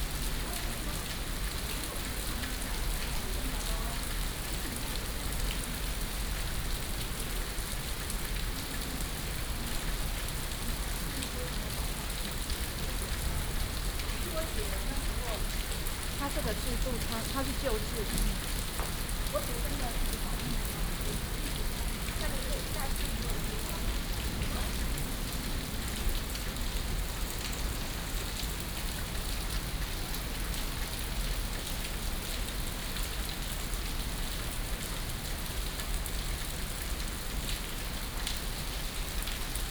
松山文創園區, Taipei City - Thunder
Rainy Day, Thunder
Taipei City, Taiwan, 9 September 2016, ~18:00